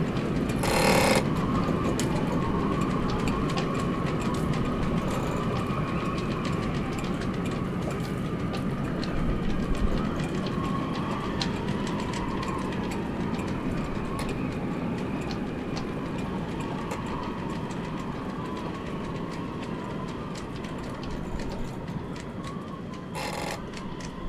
Ved Fjorden, Struer, Danmark - Struer Harbor sound of heavy wind and sailboats
Heavy wind and sounds from sailboats mast. Recorded with rode NT-SF1 Ambisonic Microphone. Øivind Weingaarde